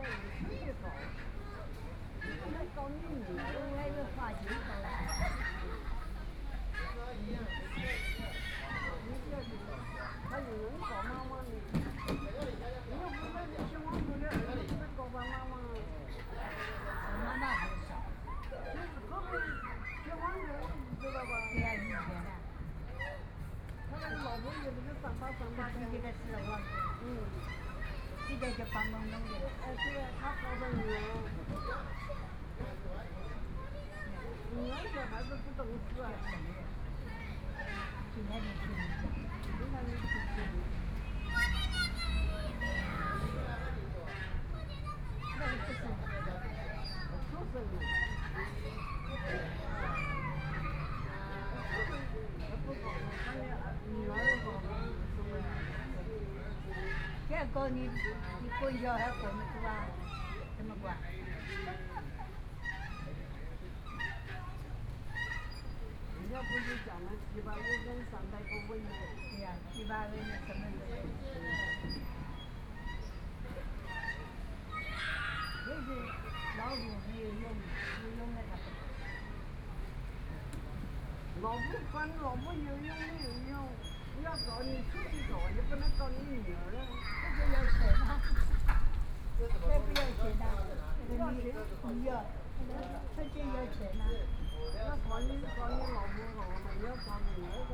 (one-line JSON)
{"title": "SiPing Park, Taipei City - in the Park", "date": "2014-04-04 16:21:00", "description": "Kids play area, Voice chat between elderly, Holiday in the Park, Sitting in the park, Traffic Sound, Birds sound\nPlease turn up the volume a little. Binaural recordings, Sony PCM D100+ Soundman OKM II", "latitude": "25.05", "longitude": "121.53", "altitude": "15", "timezone": "Asia/Taipei"}